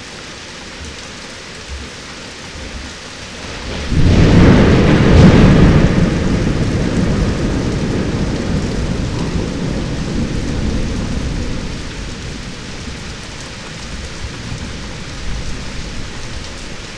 Berlin Bürknerstr., backyard window - Hinterhof / backyard ambience
00:34 Berlin Bürknerstr., backyard window
(remote microphone: AOM5024HDR | RasPi Zero /w IQAudio Zero | 4G modem
Berlin, Germany, 23 August